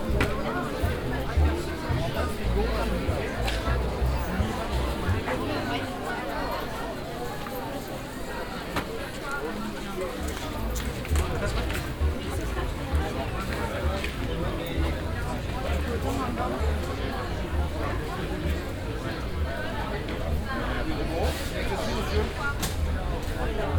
vaison la romaine, cours taulignan
On the weekly village market. The sound of visitors passing by, plastic shopping bags and different market stalls.
international village scapes and topographic field recordings
Vaison-la-Romaine, France, 28 August 2011